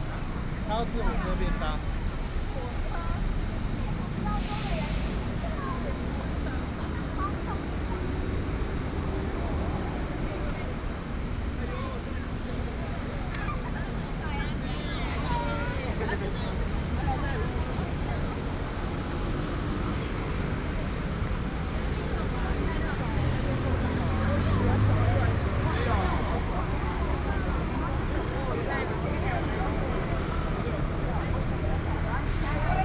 walking on the Road, Traffic Sound, Very many people traveling to the park direction
Please turn up the volume a little. Binaural recordings, Sony PCM D100+ Soundman OKM II